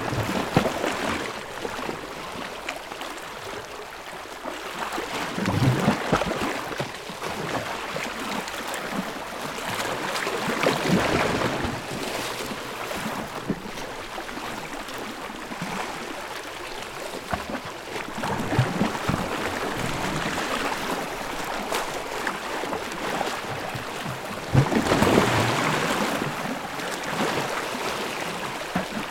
It was full moon night in a remote area in Hengam Island. The sea was rising because of the tide.
شهرستان قشم, استان هرمزگان, ایران, 13 December